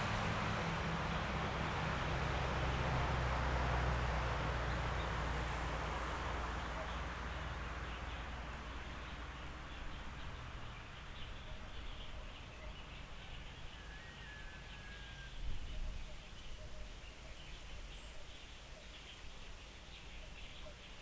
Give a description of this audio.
Stepping on leaves, Birds singing, Traffic Sound, Zoom H6 M/S